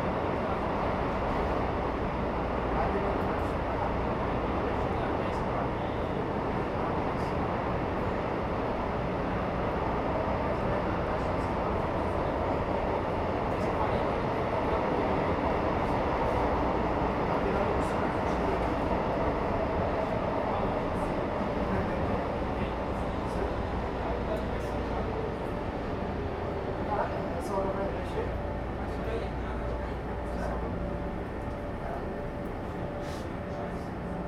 Pedro II - Brás, São Paulo - SP, 03216-050, Brasil - interior vagão de metrô de são paulo
captação estéreo com microfones internos